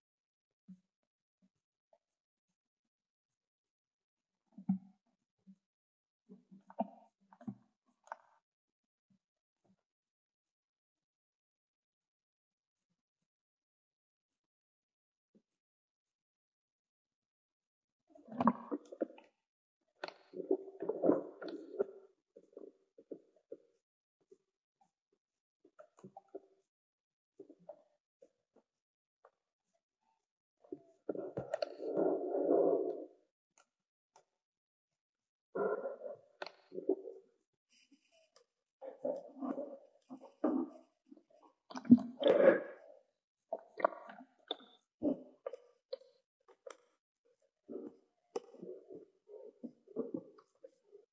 Tündern, Hameln, Germany Underwater Sounds Weser Lakes - UNDERWATER SOUNDS (Lakes at the Wesser)
Sounds underwater at the lake along the Weser river in Hameln, recorded with Underwater camera and microphones for underwater sound recordings. Recording took place in July 2017.